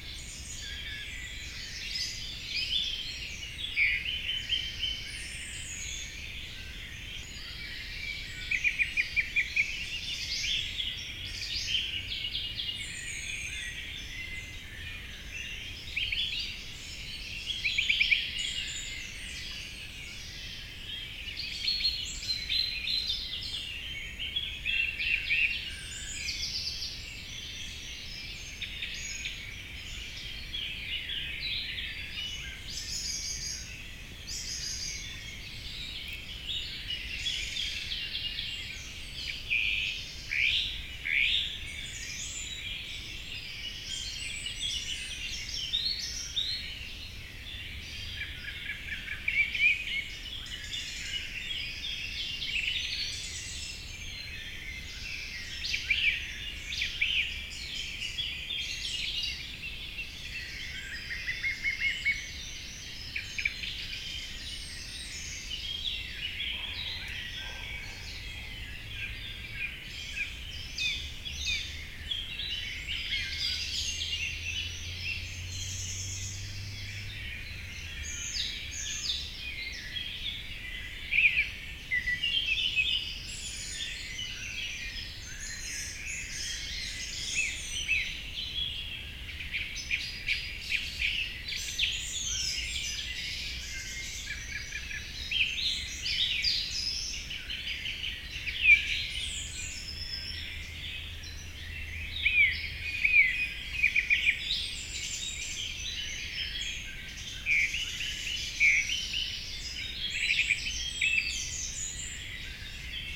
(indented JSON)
{
  "title": "Montagnole, France - An hour with blackbirds waking up",
  "date": "2017-06-07 04:40:00",
  "description": "There's nothing more magical than the first bird shout on the morning. In the middle of the night, forest is a wide silent. Slowly dawn arrives, a distant hubbub is heard and the first shout emerges from the forest. Birds intensely sing in the morning in order to celebrate the fact of having survived the night (for this reason blackbirds make many shrill screams during nightfall because of anxiety). A quiet morning allows birds to reaffirm their territory possession, shouting clearly to the others. In this remote path in the forest of Montagnole (Savoy, France), I was immediately seduced by these woods immensely filled with blackbirds shouts. This is why I recorded them rising from 4:30 in the morning to later. Unfortunately the places is drowned in a constant flood of planes vomit sounds, but I had no choice. Early and temporarily exempt by this misery, I can give this recording, awakening with blackbirds.\n0:48 - The first shout of the morning.\n4:00 - Unleashed dogs.",
  "latitude": "45.52",
  "longitude": "5.91",
  "altitude": "723",
  "timezone": "Europe/Paris"
}